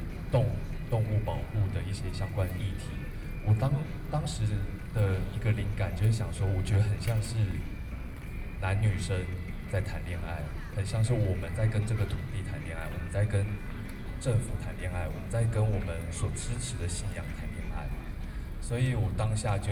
Idol actor, Opposed to nuclear power plant construction, Binaural recordings
9 August 2013, 20:05